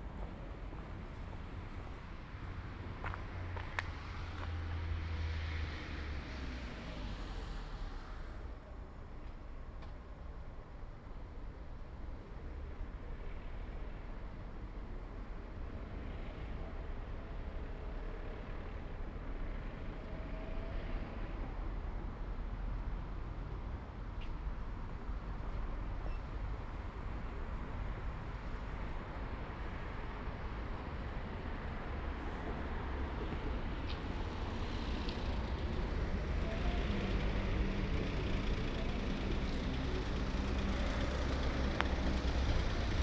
Ascolto il tuo cuore, città. I listen to your heart, city. Several chapters **SCROLL DOWN FOR ALL RECORDINGS** - “No shopping in the open (closed) market at the time of covid19” Soundwalk
“No shopping in the open (closed) market at the time of covid19” Soundwalk
Chapter XX of Ascolto il tuo cuore, città
Tuesday March 24 2020. No shopping in the open air square market at Piazza Madama Cristina, district of San Salvario, Turin: the market is closed. Two weeks after emergency disposition due to the epidemic of COVID19.
Start at 11:15 a.m., end at h. 11:41 p.m. duration of recording 25’57”''
The entire path is associated with a synchronized GPS track recorded in the (kml, gpx, kmz) files downloadable here:
24 March 2020, 11:15